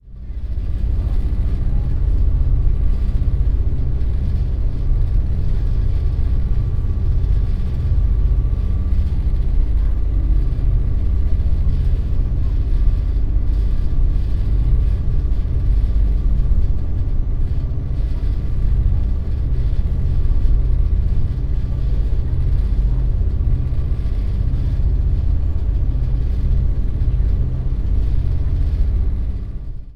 stromboli, aliscafo to salina - engine vibrations
the aliscafos are very fast, but they sound as if they would crash within the next minute